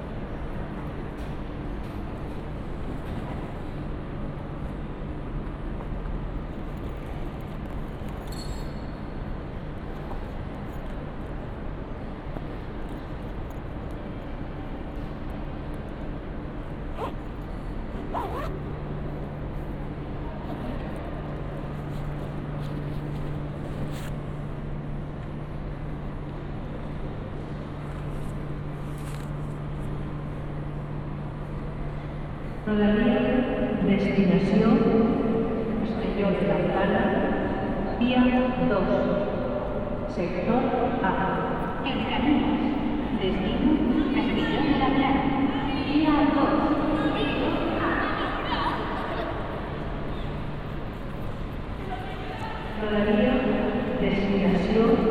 Ruzafa, Valencia, Valencia, España - Estación de Valencia